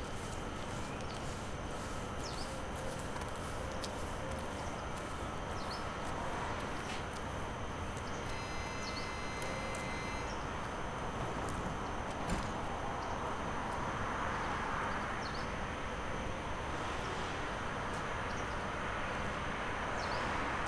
M. K. Čiurlionio g., Kaunas, Lithuania - Train station - near a train getting ready to leave
Long recording of a train station atmosphere. The train is getting ready to leave, periodically producing various sounds. A few people go by, entering or exiting the train. At the end, an old man takes a phone call near the recorder. Recorded with ZOOM H5.